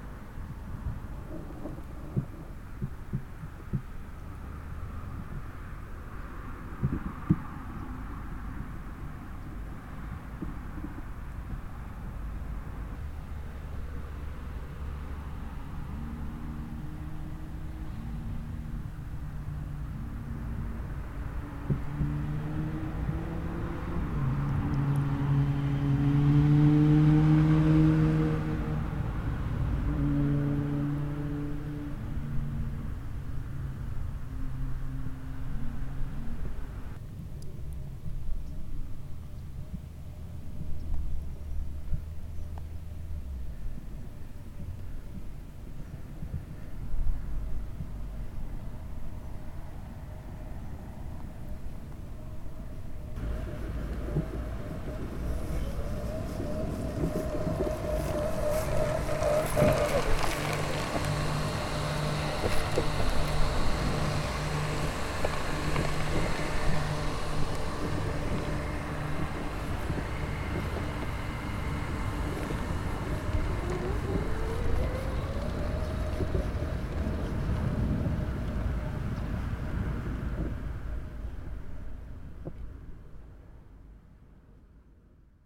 Près du terrain de football de Chindrieux, Robi le robot tondeur parcours la pelouse en obliques perpétuelles. Circulation sur la RD 991.